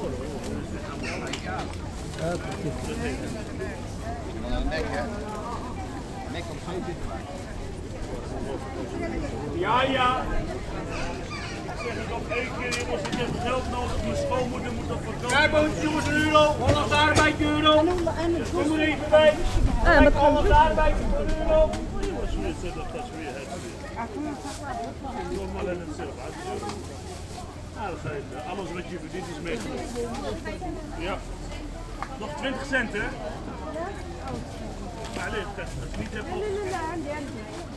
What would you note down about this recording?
The ambience from the Dappermarkt - supposedly one of the most intercultural markets of Amsterdam. City's residents of Surinamese, Antillian, Turkish, and Moroccan origin learned how to mimick the real Dutch business calls of the fruit and fish sellers: Ja, ja, kom op, echte holandse ardbeien.. lekker hoor..